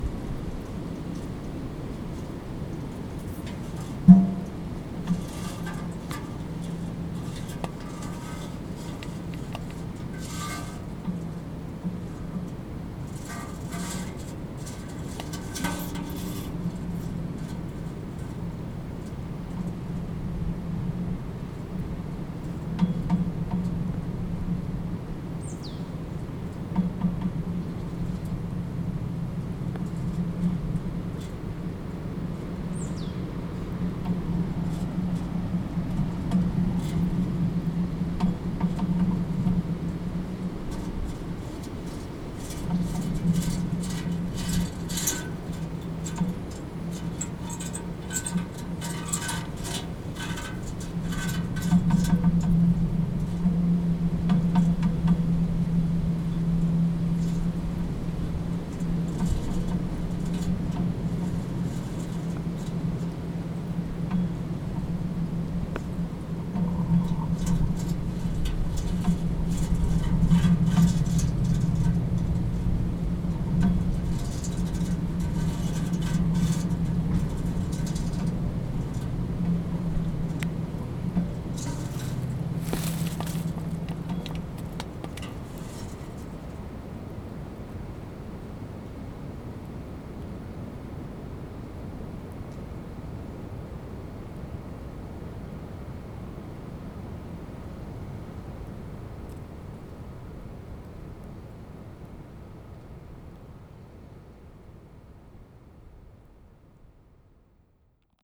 Hřbitov, Václavice, Provodov-Šonov, Czechia - Zvuk z vnitřku plechové konve před kostelem
Dějiště sněmu v r. 1068 a bitvy v r. 1866. Důležitá krajinná dominanta. Filiální kostel svatého Václava na Dobeníně / Václavicích. Od roku 1259 kostel označen jako farní, raně gotická stavba s klenutým presbytářem, kružbovými okny presbytáře a sanktuářem. V 16. století opodál kostelíka vznikla rustikální zvonice goticko-renesančního vzhledu. Na přelomu 18. a 19. století zřízena dřevěná kruchta, pravděpodobně v té době přestaveny i předsíň a sakristie a dnešní krov. Poté byl ohrazen hřbitov. Nahrávka ze zavěšené konve na zalévání květin na hrobech. poměrně silný vítr je slyšet v okolních stromech.
Severovýchod, Česko, 1 August